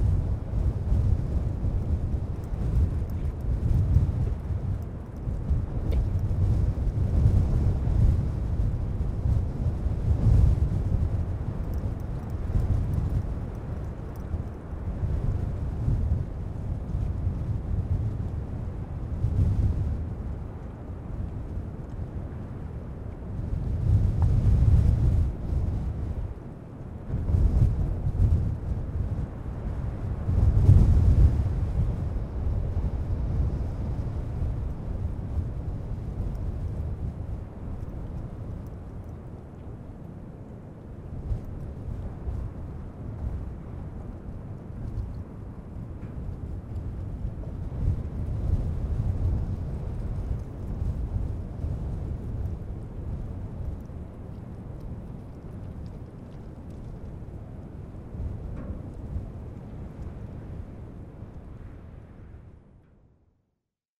Hilary Wilson keeps Rough Fell sheep and has written a book about hill farming. She has collected a lot of oral histories of hill farmers in Cumbria, and is very keen on the Rough Fell sheep and the whole way of life that surrounds farming this breed in the Lake District. This is the sound of the wind on her farm, which I think does a great job of evoking all the reasons why the Rough Fell sheep needs such a thick, coarse fleece to protect it from the elements.
Cumbria, UK, 3 January 2012, 15:00